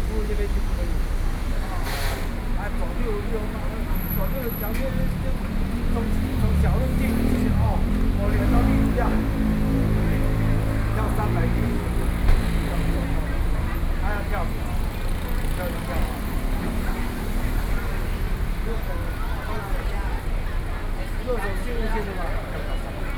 {"title": "Zhongli, Taiwan - Square in front of the station", "date": "2013-08-12 13:56:00", "description": "Square in front of the station, Sony PCM D50 + Soundman OKM II", "latitude": "24.95", "longitude": "121.23", "altitude": "139", "timezone": "Asia/Taipei"}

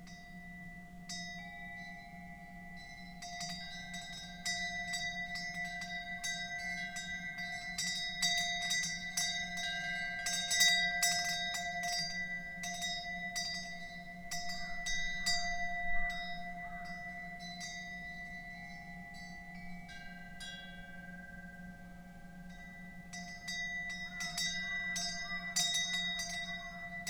{"title": "산절의 풍경 Wind bells at a mountain temple", "date": "2021-01-24 11:00:00", "latitude": "37.98", "longitude": "127.63", "altitude": "205", "timezone": "Asia/Seoul"}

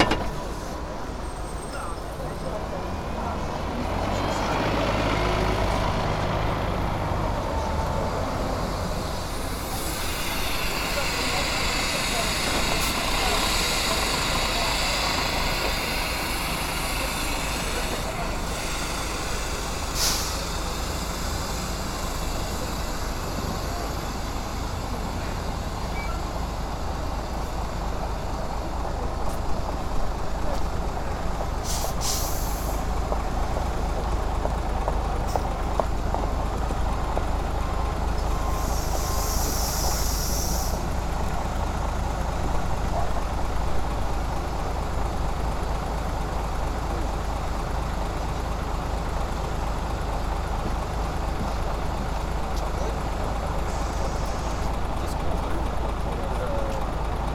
{"title": "Bus station, Kuzminky", "date": "2011-05-12 15:52:00", "description": "Kuzminky, Bus station", "latitude": "55.71", "longitude": "37.77", "altitude": "142", "timezone": "Europe/Moscow"}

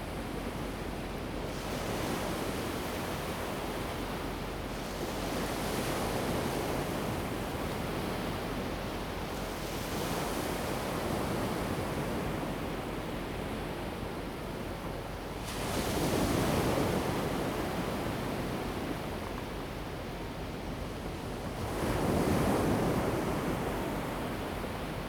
{"title": "Sizihwan, Gushan District, Kaohsiung - on the beach", "date": "2016-11-22 14:59:00", "description": "Sound of the waves, on the beach\nZoom H2n MS+XY", "latitude": "22.63", "longitude": "120.26", "altitude": "1", "timezone": "Asia/Taipei"}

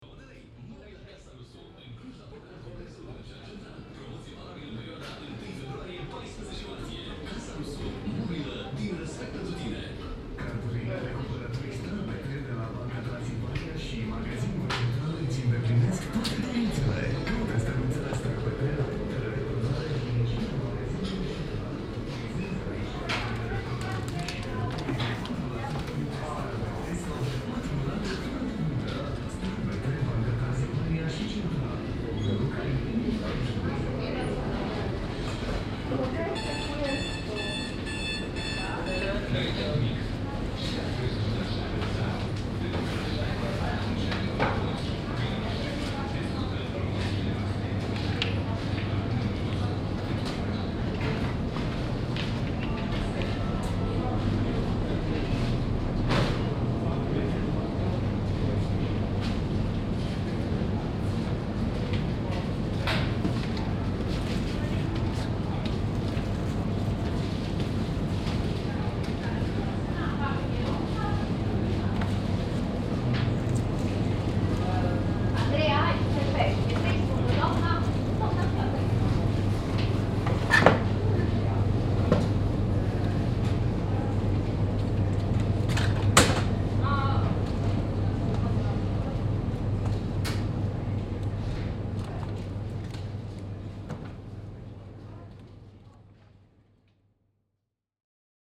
{"title": "Old Town, Klausenburg, Rumänien - Cluj - Napoca - Centre Commercial Central", "date": "2014-03-03 10:40:00", "description": "Inside a small shopping mall like architecture with different single shop stands. Listening to the sound of the overall atmosphere with electronic advertisments.\nsoundmap Cluj- topographic field recordings and social ambiences", "latitude": "46.77", "longitude": "23.59", "timezone": "Europe/Bucharest"}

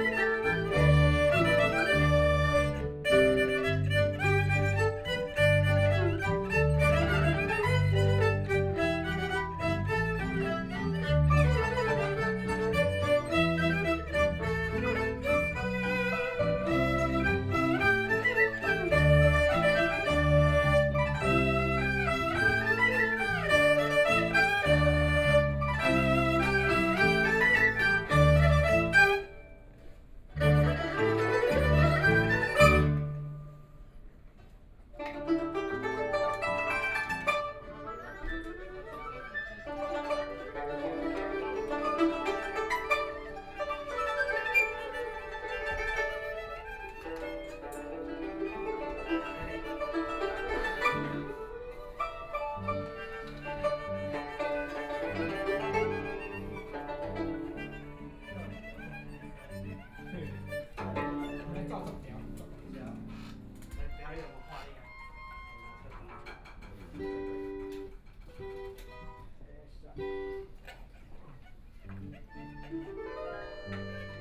{"title": "Taipei, Taiwan - Practice", "date": "2013-12-20 10:52:00", "description": "A visually impaired person to play with the orchestra is practicing sound of conversation, Binaural recording, Zoom H6+ Soundman OKM II", "latitude": "25.05", "longitude": "121.52", "altitude": "40", "timezone": "Asia/Taipei"}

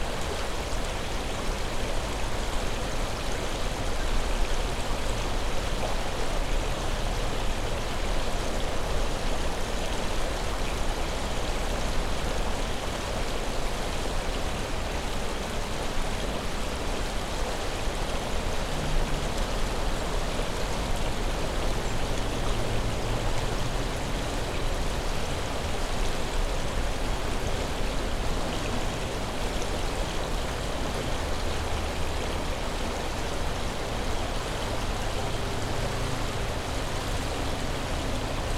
{"title": "Powers Island Hiking Trail, Sandy Springs, GA, USA - On the side of the trail", "date": "2021-01-10 15:58:00", "description": "A recording made at the side of Powers Island Trail facing the river. The sound of water is very prominent. Other sounds are present, such as other hikers behind the recorder and some geese.\n[Tascam DR-100mkiii & Clippy EM-272s]", "latitude": "33.91", "longitude": "-84.45", "altitude": "251", "timezone": "America/New_York"}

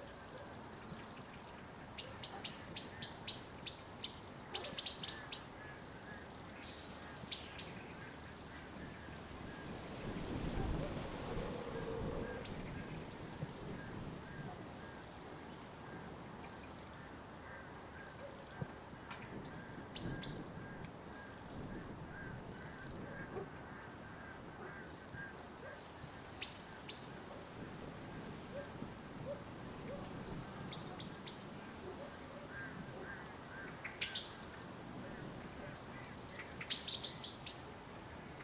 {"title": "Chemin des Ronferons, Merville-Franceville-Plage, France - Birds", "date": "2018-11-10 17:57:00", "description": "Birds around my home place, Zoom H6", "latitude": "49.27", "longitude": "-0.18", "altitude": "4", "timezone": "GMT+1"}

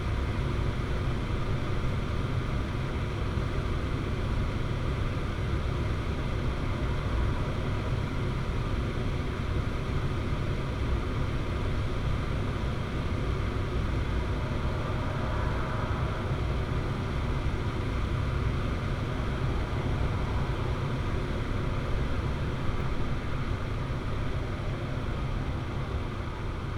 {"title": "workum, het zool: in front of marina building - the city, the country & me: outside ventilation of marina building", "date": "2012-08-02 00:01:00", "description": "the city, the country & me: august 2, 2012", "latitude": "52.97", "longitude": "5.42", "altitude": "255", "timezone": "Europe/Amsterdam"}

